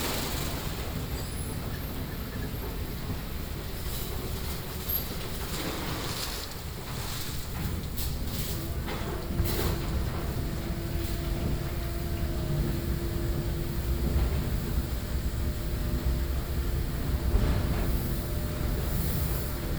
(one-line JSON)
{"date": "2011-09-10 15:42:00", "description": "wasstraat tankstation\ncar washing street tankstation", "latitude": "52.16", "longitude": "4.45", "altitude": "1", "timezone": "Europe/Amsterdam"}